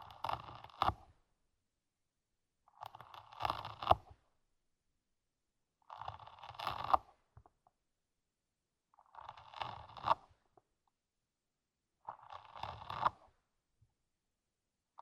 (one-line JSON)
{"title": "Mont-Saint-Guibert, Belgique - Famished snail eating", "date": "2016-05-31 21:40:00", "description": "Recording of a famished snail, eating a carrot. This poor snail was completely starving in the garden. I embarked him and I gave him a good carrot. At the beginning, he was extremely afraid, but a few time after, he was so happy of this improvised meal !\nWhat you hear is the radula, the snail tongue, scratching methodically the carrot. It was completely magical to hear him on the first seconds, as this is normally inaudible, I let him eating a banquet ! I named him \"Gerard\" the Snail ;-)", "latitude": "50.64", "longitude": "4.61", "altitude": "116", "timezone": "Europe/Brussels"}